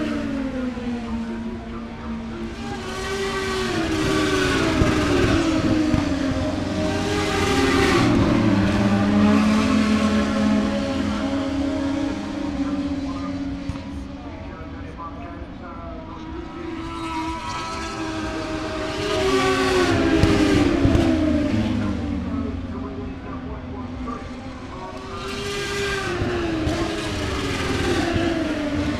{"title": "Silverstone Circuit, Towcester, UK - british motorcycle grand prix 2019 ... moto two ... fp1 contd ...", "date": "2019-08-23 11:30:00", "description": "british motorcycle grand prix 2019 ... moto two ... fp1 contd ... some commentary ... lavalier mics clipped to bag ... background noise... the disco from the entertainment area ...", "latitude": "52.07", "longitude": "-1.01", "altitude": "157", "timezone": "Europe/London"}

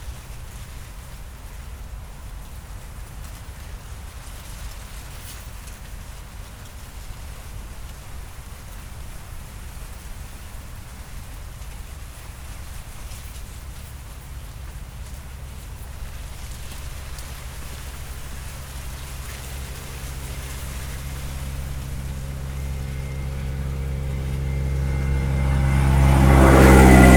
{"title": "Walhain, Belgique - Corn field", "date": "2016-08-06 14:40:00", "description": "The wind, in a corn field.", "latitude": "50.65", "longitude": "4.67", "altitude": "138", "timezone": "Europe/Brussels"}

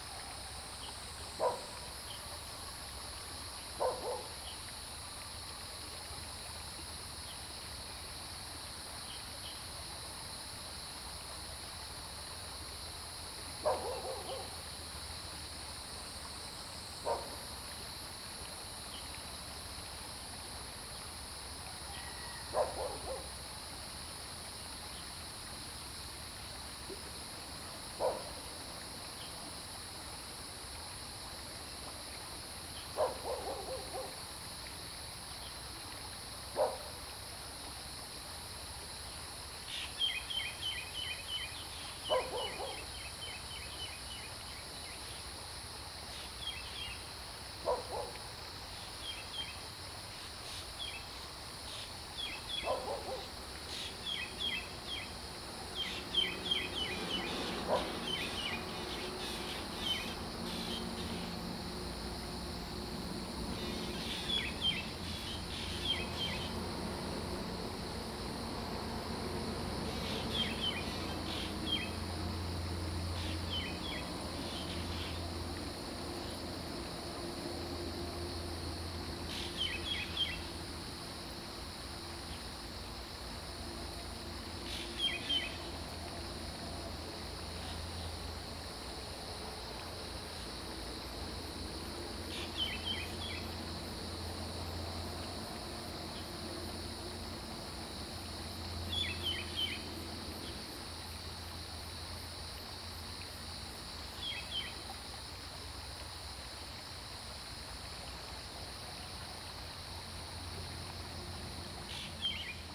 {"title": "土角厝水上餐廳, 埔里鎮桃米里 - Bird and Stream", "date": "2015-06-12 05:58:00", "description": "Bird and Stream, Bird calls, Dogs barking\nZoom H2n MS+XY", "latitude": "23.94", "longitude": "120.92", "altitude": "474", "timezone": "Asia/Taipei"}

